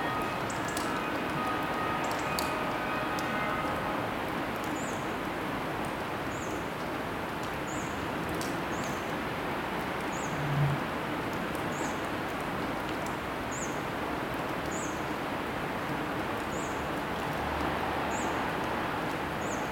Rue Devant les Grands Moulins, Malmedy, Belgique - Morning ambience
Drone from the air conditionning system, water drops and a few birds.
Tech Note : Sony PCM-D100 internal microphones, wide position.